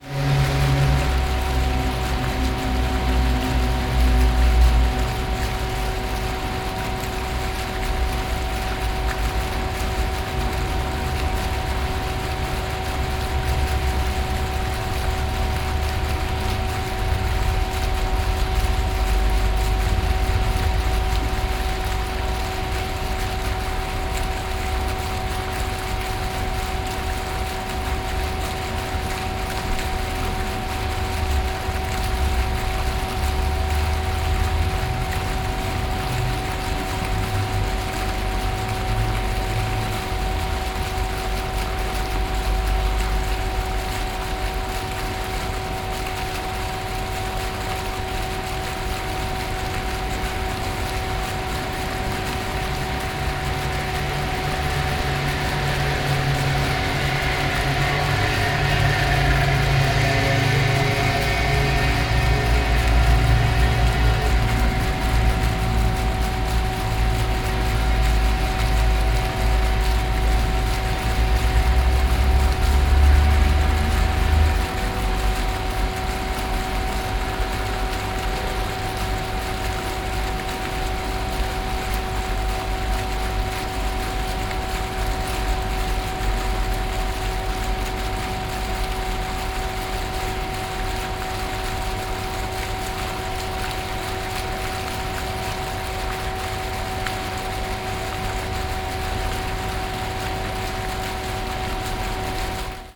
Estepona promenade, fountain
Estepona, sitting on pumping set between two fountains